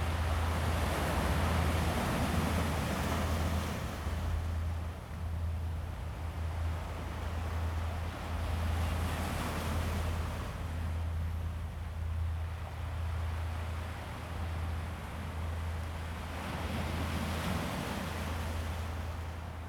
富岡里, Taitung City - near the fishing port
Sound of the waves, Aircraft flying through, The weather is very hot, in the coast near the fishing port, Yacht
Zoom H2n MS +XY
6 September 2014, 09:18